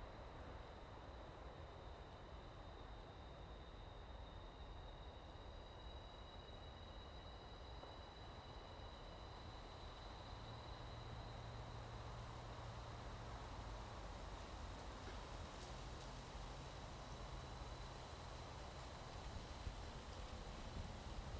中山紀念林, Kinmen County - in the Park
in the woods, the wind, Traffic Sound, Aircraft flying through
Zoom H2n MS +XY